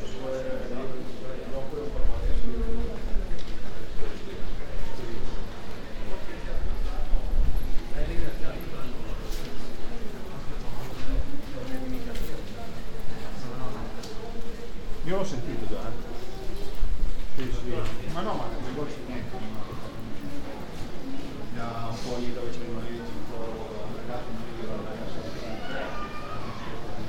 METS-Conservatorio Cuneo: 2019-2020 SME2 lesson1B - “Walking lesson SME2 in three steps: step B”: soundwalk
METS-Conservatorio Cuneo: 2019-2020 SME2 lesson1B
“Walking lesson SME2 in three steps: step B”: soundwalk
Thursday, October 1st 2020. A three step soundwalk in the frame of a SME2 lesson of Conservatorio di musica di Cuneo – METS department.
Step B: start at 10:22 a.m. end at 10:39, duration of recording 17’02”
The entire path is associated with a synchronized GPS track recorded in the (kmz, kml, gpx) files downloadable here:
Piemonte, Italia, 1 October 2020, 10:22